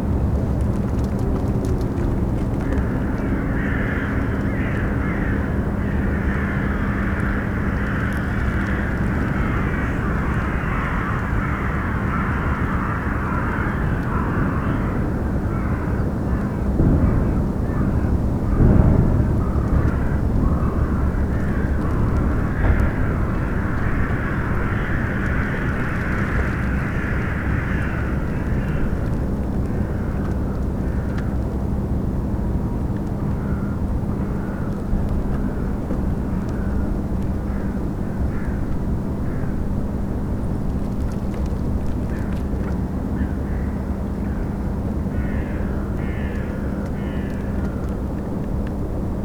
berlin, plänterwald: spree - the city, the country & me: spree river bank
cracking ice of the frozen spree river, towboat maneuvers a coal barge into place, crows, distant sounds from the power station klingenberg
the city, the country & me: january 26, 2014
Berlin, Germany, January 2014